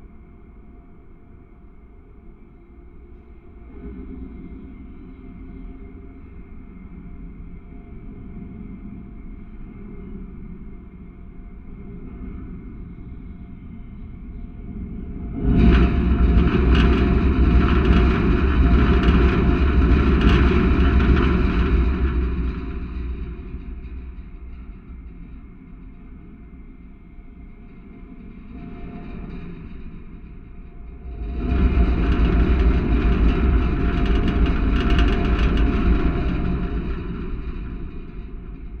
contact mic recording of scaffold connected to bridge
22 June 2011, ~16:00